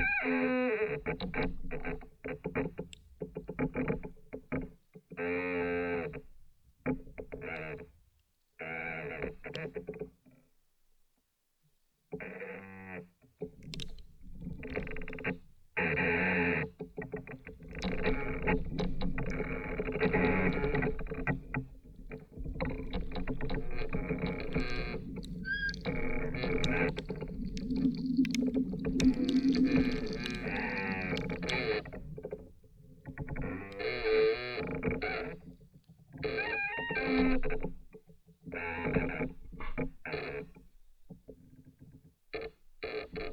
another contact microphone recording of the singing tree